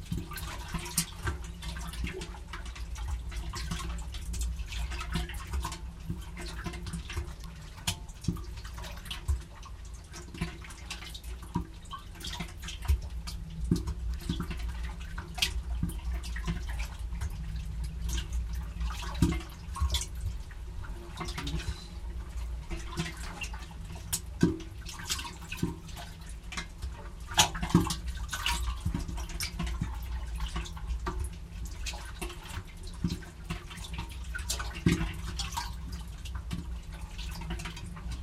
Emajõgi delta, rubber tires on dock
A recording made from 2 binaural mics placed in some tires on the shore of the Peipsi lake.
Estonia, 5 August 2008, 23:04